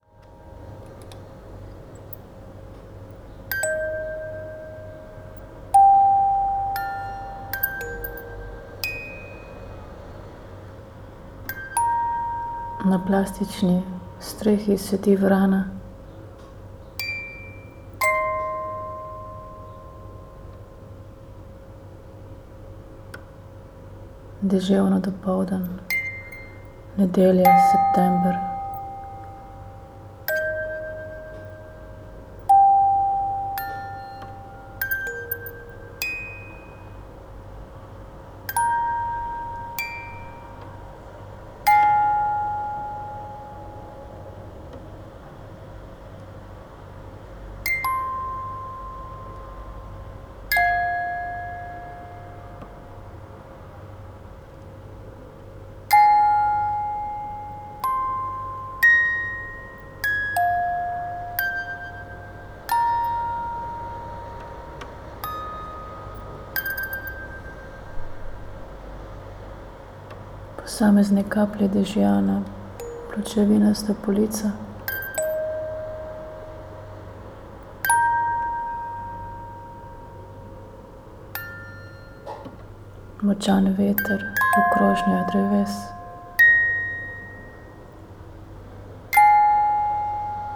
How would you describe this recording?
rainy sunday morning, na plastični strehi sedi vrana, deževno dopoldan, nedelja, september, posamezne kaplje dežja na pločevinasto polico, močan veter v krošjah dreves, na pločniku spodaj nekaj pešcev, nekateri so z dežniki, drugi brez, promet se pričenja gostit, moder dežnik in rdeč dežnik, nebo nad Berlinom, prekrito s sivo-modrimi oblaki, hitro potujejo z desne proti levi, iz zahoda proti vzhodu